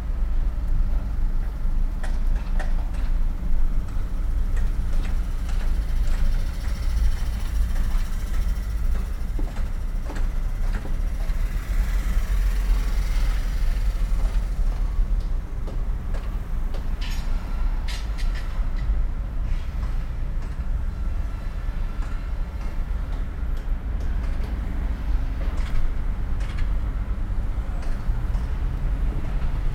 soundmap: köln/ nrw
verkehrsgeräusche im fahrzeug, im dichten nachmittags verkehr auf der rheinuferstr, parallel fahrt zu baustelle rheinauhafen
project: social ambiences/ listen to the people - in & outdoor nearfield